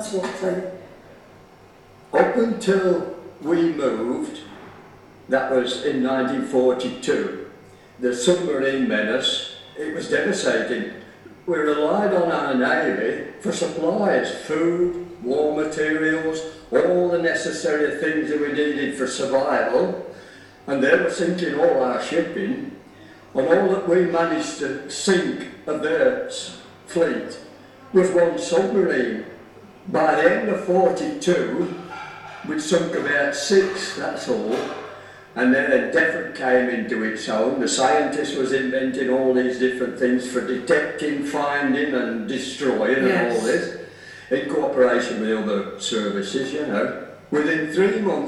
Defford SIGINT enclave and National Trust hist centre - 2009-03-26 174238 Defford airfield hist centre